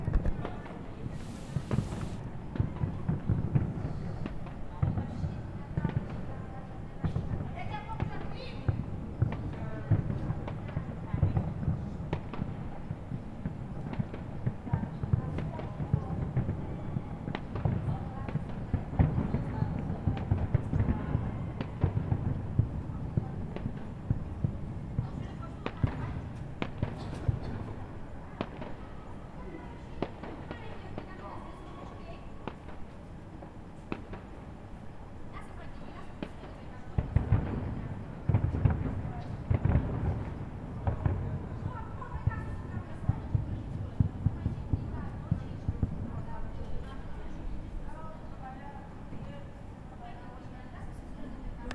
gomes freire, Lisbon, new year 2011
fireworks, people yelling, new year 2011, Lisbon
1 January 2011, 00:02